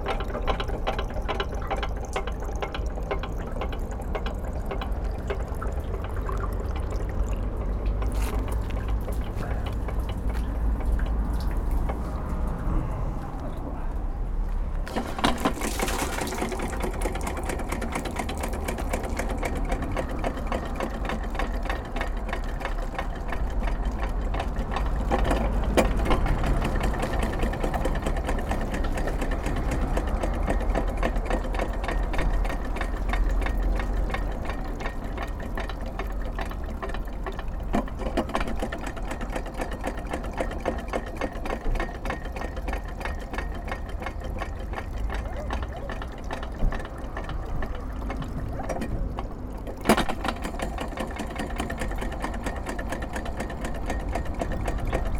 Caudebec-en-Caux, France - Fountain
Catching water to a Bayard fountain, because we don't have any liter of water, bottles are empy !